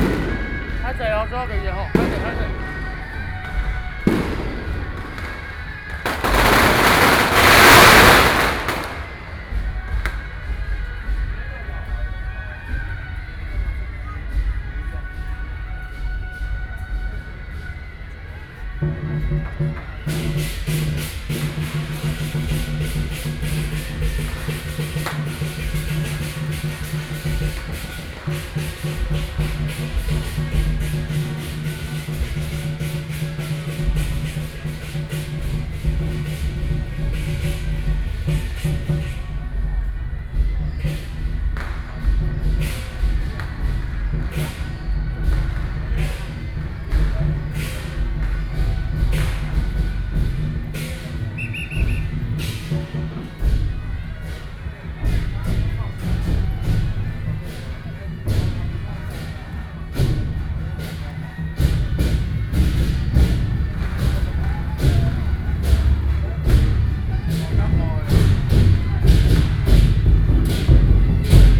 Traditional temple festivals, Binaural recordings, Sony PCM D50 + Soundman OKM II, ( Sound and Taiwan - Taiwan SoundMap project / SoundMap20121115-8 )